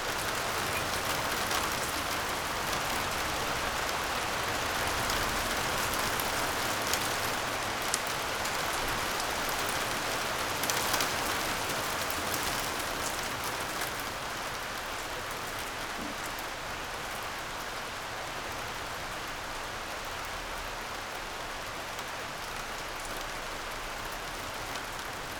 Berlin Bürknerstr., backyard window - thunder, rain
thunder approaches, it starts to rain.
(Sony PCM D50)